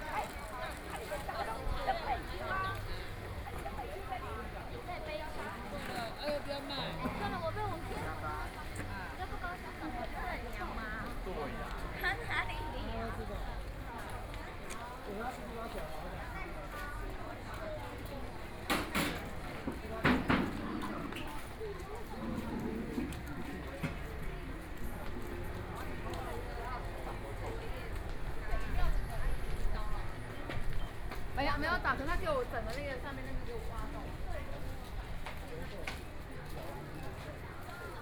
Zhengqi Rd., Taitung City - Night market
walking in the Night market, Snacks, Binaural recordings, Zoom H4n+ Soundman OKM II ( SoundMap2014016 -26)
Taitung County, Taiwan, 16 January 2014, 19:20